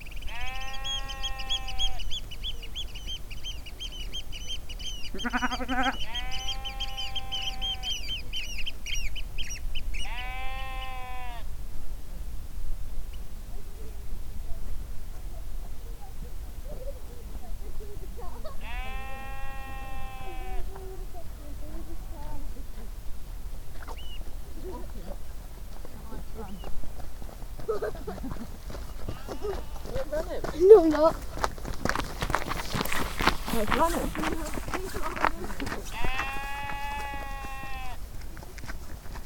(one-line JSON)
{"title": "Shetland Islands, UK - Sheep grazing on Sumburgh Head, accompanied by seabirds and tourists", "date": "2013-07-31 20:30:00", "description": "Sumburgh Head is very popular with walkers, as you can quite easily see Puffins around there, and occasionally Whales are spotted from the viewpoints around the cliffs. It is an extraordinarily beautiful place, bordered on all sides with steep rock edifices, and on the grassland all around the car park, sheep are grazing. I am not sure that these sheep are actually grown for wool; they looked like meaty little Suffolk sheep rather than Shetland sheep grown for wool, but as is often the case in Shetland where you are never more than 3 miles from the coastline, there is a wonderful mix of grazing and pastoral sounds with maritime and seaside sounds. At this moment, a lamb was quite insistently baa-ing, very close to me on the path. As I stood very still, listening and recording with my trusty EDIROL R-09, a family passed me on the path, and several birds (I think perhaps even some oyster catchers?) flew by overhead.", "latitude": "59.86", "longitude": "-1.27", "altitude": "16", "timezone": "Europe/London"}